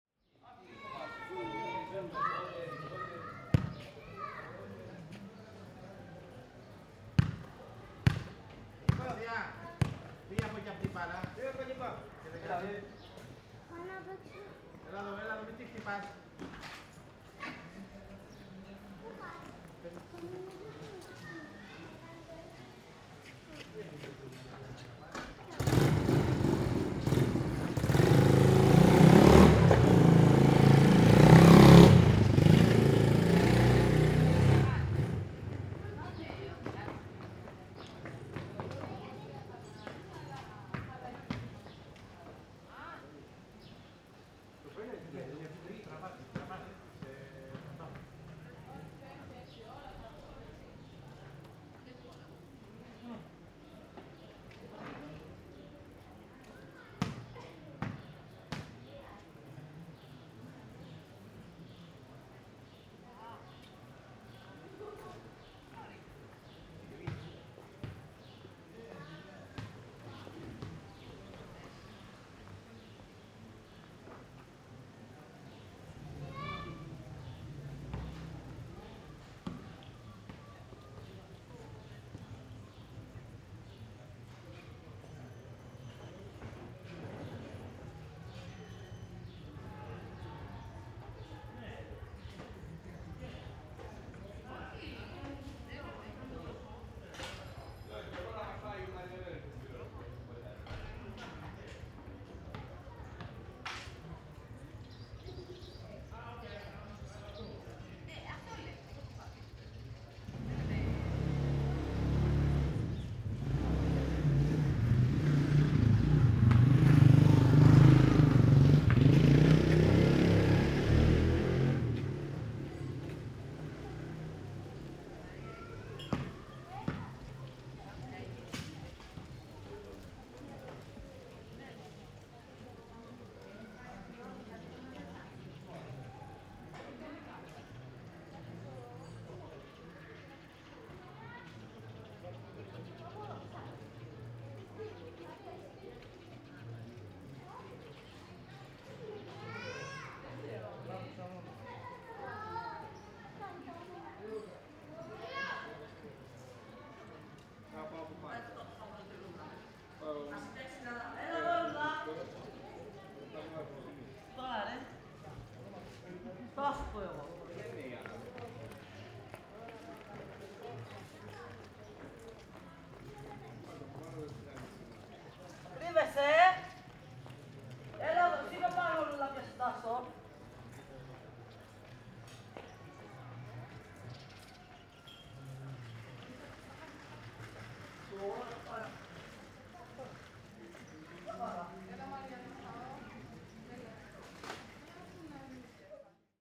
Fourni, Griechenland - Dorfplatz
Am Abend auf dem Dorfplatz. Die Insel ist Autofrei.
Mai 2003
Fourni, Greece, 9 May, ~16:00